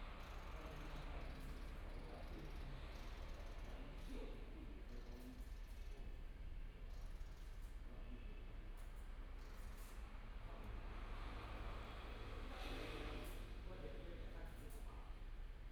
Walking in the small streets, Sitting in front of the temple, Traffic Sound, Elderly voice chatting
Binaural recordings
Zoom H4n+ Soundman OKM II